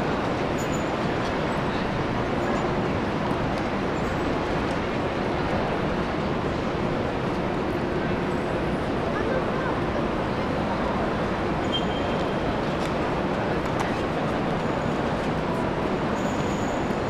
Milan, Centrale train station, main hall - stroll around main hall
the volume of the Central train station is tremendous. lots of space to be filled with sounds. very interesting sonic experience.
8 September 2014, 15:12